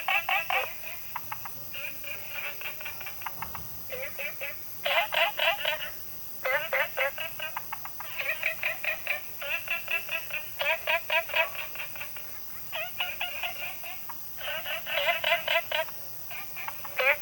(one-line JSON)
{"title": "青蛙ㄚ 婆的家, Taomi Ln., Puli Township - Small ecological pool", "date": "2015-08-10 22:38:00", "description": "Frogs chirping, Small ecological pool\nZoom H2n MS+XY", "latitude": "23.94", "longitude": "120.94", "altitude": "463", "timezone": "Asia/Taipei"}